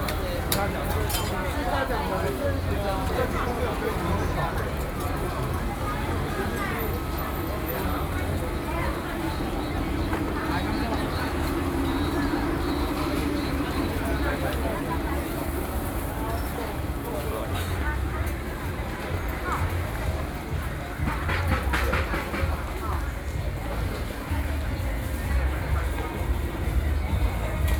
Guǎngzhōu St, Wanhua District - SoundWalk
Taipei City, Taiwan, 3 December 2012